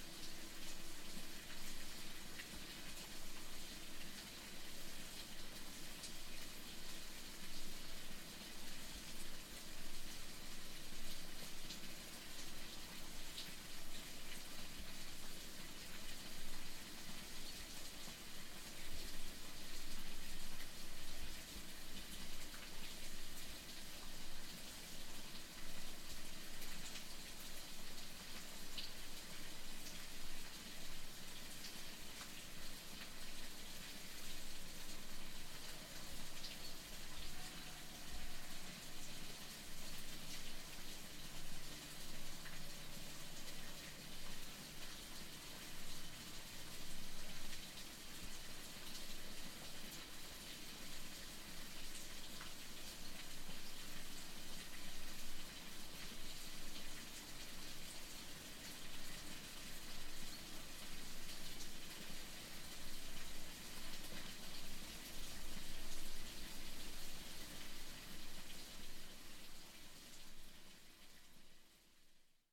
Hooke, Dorset, UK - Hooke Park Wood, stream
Hooke Park is a 350-acre working forest in Dorset, south west England, that is owned and operated by the Architectural Association.
Beaminster, Dorset, UK, November 2013